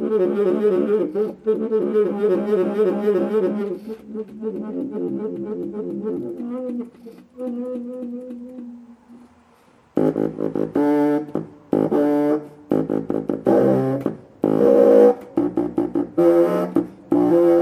open air performance during gallery opening